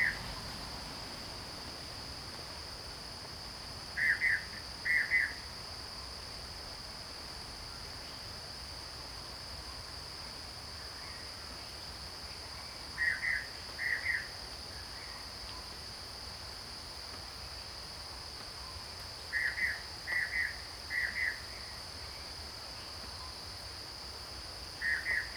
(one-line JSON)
{"title": "Zhong Lu Keng Wetlands, Puli Township, Nantou County - Bird calls", "date": "2015-08-26 08:03:00", "description": "Cicada sounds, Bird calls\nZoom H2n MS+XY", "latitude": "23.94", "longitude": "120.92", "altitude": "503", "timezone": "Asia/Taipei"}